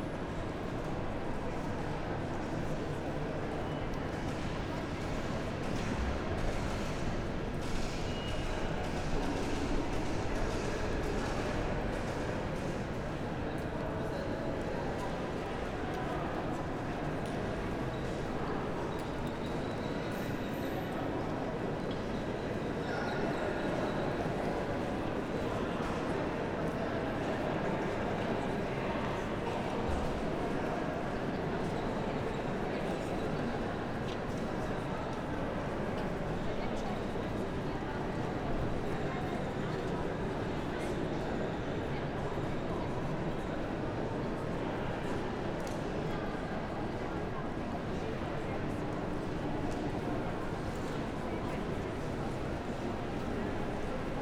Hbf Bremen - main station hall ambience
Bremen Hauptbahnhof, main station, holiday evening ambience at the entry hall
(Sony PCM D50, Primo EM172)
Bremen, Germany, 1 May 2018